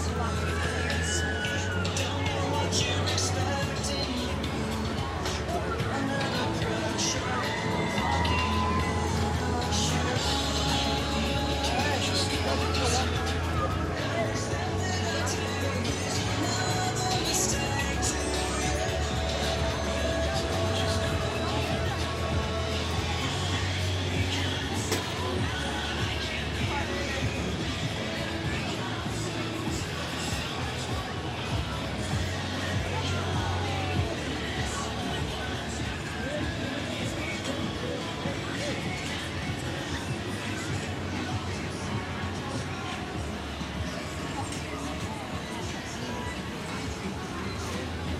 State Fairgrounds, Cottonwood Ave, Hutchinson, KS, USA - Walking the Midway Loop
Rides, games and carnival barkers, stationed northwest of the grandstand. Stereo mics (Audiotalaia-Primo ECM 172), recorded via Olympus LS-10.